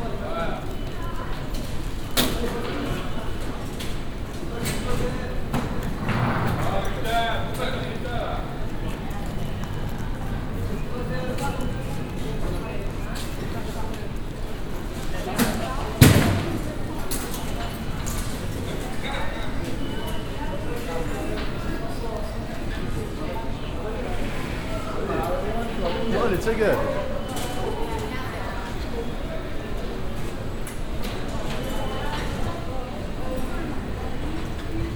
ticket machines been used in heavy traffic in the early afternoon, a walk thru to the subway platform
cityscapes international: socail ambiences and topographic field recordings